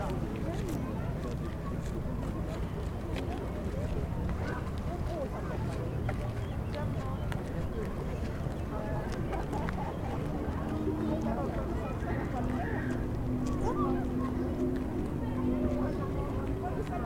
plage municipale, Aix-les-Bains, France - ambiance de plage.
Face au lac ambiance de plage avec bande cyclable en béton.
France métropolitaine, France, 25 July 2022, 12:00